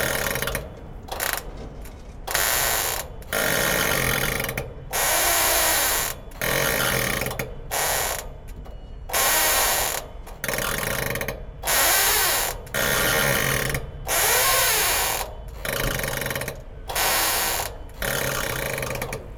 Chaillot, Paris, France - Boat bridge
Squeaking of two bridges of a restaurant-boat. Somebody was talking to me : What are you doing ? I said : I'm recording this sound. After this, he said to me : aaah ok, it's for an horror film !
23 September 2016, ~17:00